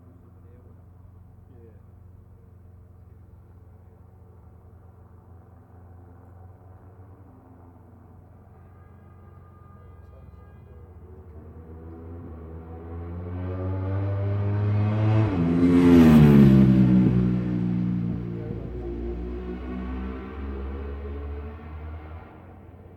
Brands Hatch GP Circuit, West Kingsdown, Longfield, UK - world superbikes 2004 ... superbikes ...
world superbikes 2004 ... superbikes superpole ... one point stereo mic to minidisk ...